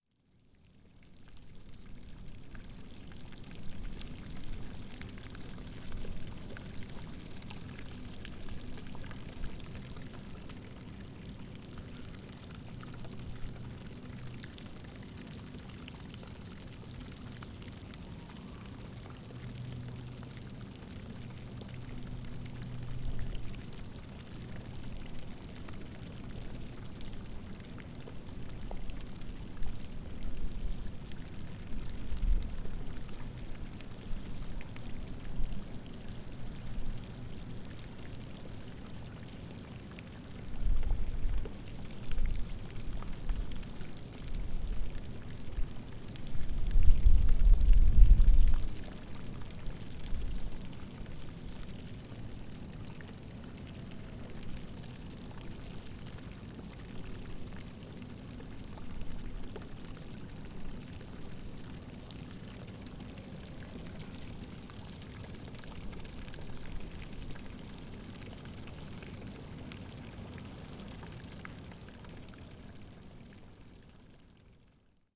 Maribor, Slovenija - Small pond in the middle of industry
Exploration of an almost abandoned industrial site - coming across a small pond with a fountain in the middle. Beautifully different and braking apart the other industrial noises.
Maribor, Slovenia, June 2012